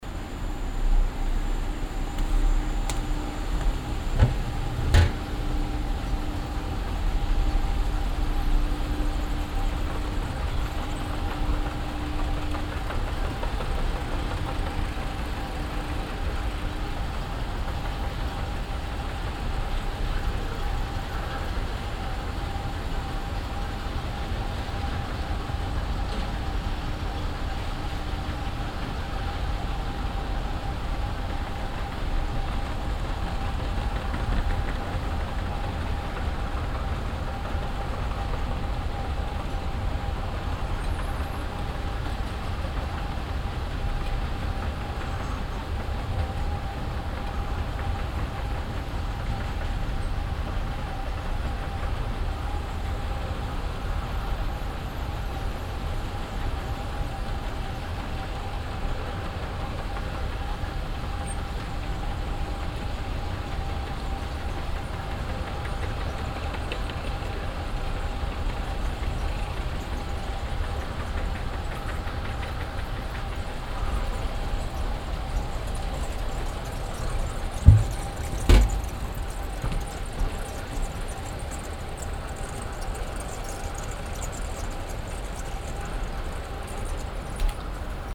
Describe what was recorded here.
mechanisches laufband für fussgänger auf stadtbrücke, morgens, soundmap nrw, - social ambiences, topographic field recordings